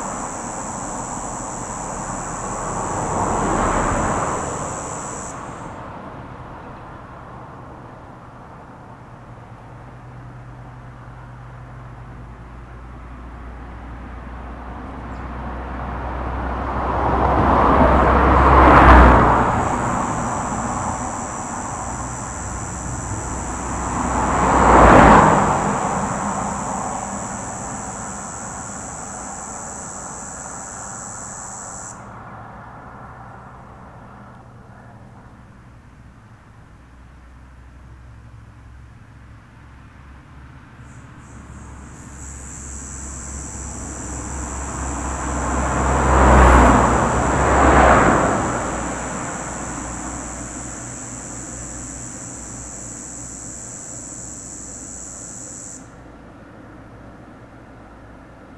Rte d'Aix, Chindrieux, France - cigales dans les platanes
Dans la côte de Groisin quelques cigales se manifestent dans les platanes, au milieu de la circulation routière.